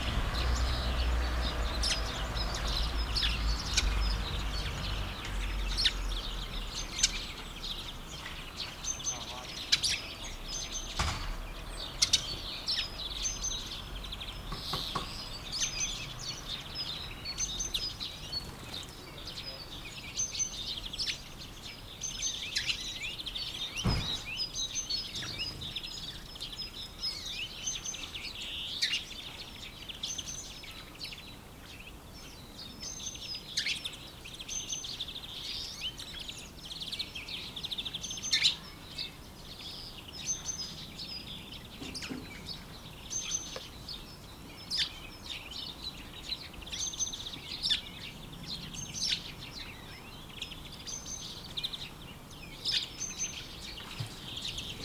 At the birdfeeder, people tugging the car
birds, car, people, Tartu, Karlova